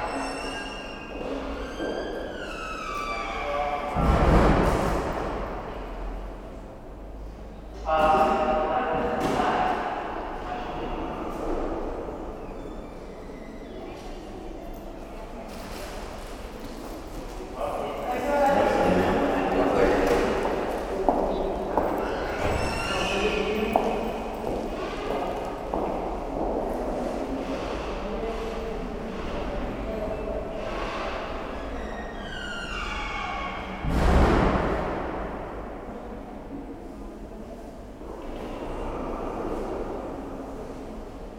Aalst, België - Aalst station
An unpleasant atmosphere in the waiting room, with a lot of reverberation. Then on the platforms, two trains pass, one to Jette and the other towards Gent. At the end of the recording, the door closes again and ends this sound.
Aalst, Belgium, 23 February 2019